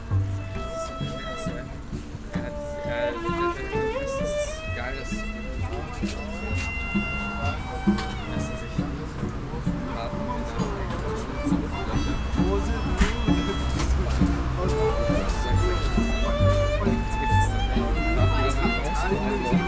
food stand, Columbiadamm, Berlin, Deutschland - ambience with musicians
noisy corner at Columbiadamm, Neukölln, Berlin, entrance to the Tempelhof airfield, newly opened korean quality fast food stand, musicians playing, pedestrians, bikes and cars on a busy Sunday afternoon.
(Sony PCM D50, DPA4060)
Berlin, Germany, 11 August, 17:00